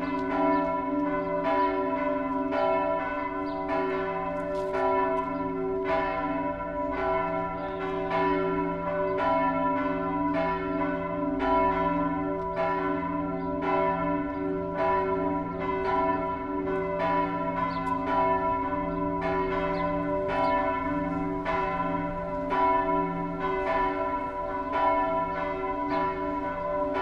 Bugården, Bergen, Norge - The Bells of Mariekirken
Went by the church and heard the bells loud and clear.
It was a beautiful sunny day, with almost no wind.
A lot of the locals and turist alike walking arround in the city.
Recorded with a Sony PCM D100 about 50 meters from the belltower.
sadly there is some traffic and other noice on the recording, but again, that is how it sounds in this spot.
Send me an email if you want it
Bergen, Norway